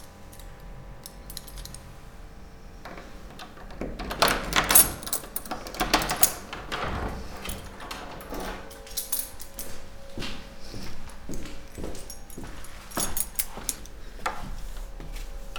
corridors, mladinska - electric meter, extremely loud lately
28 December 2014, 00:15